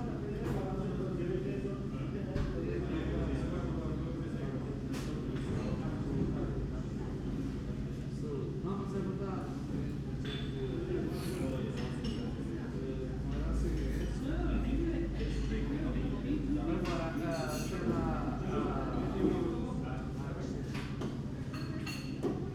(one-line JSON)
{"title": "lisbon, av. da liberdade - bar, closing time", "date": "2010-07-01 23:50:00", "latitude": "38.72", "longitude": "-9.14", "altitude": "34", "timezone": "Europe/Lisbon"}